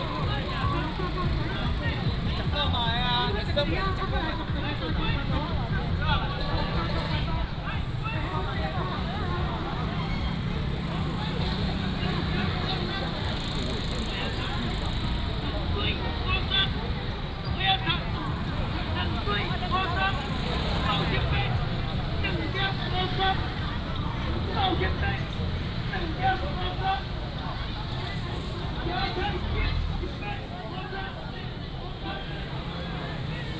Zhenbei St., Qingshui Dist. - vendors selling sound
Market vendors selling sound
Taichung City, Taiwan